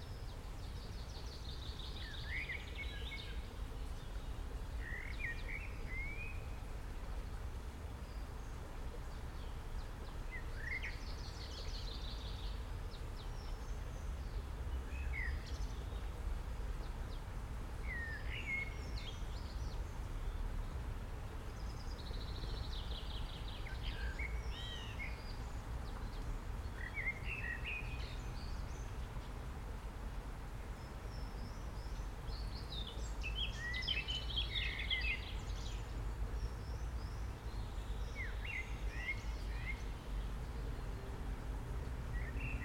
Stadtpark, Graz, Österreich - Startpark Graz Pont Morning
Stadtpark in Graz, close to the Glacisstraße (B67)
morning recording, water supply system of pont, no people, far street noise, birds, ducks, doves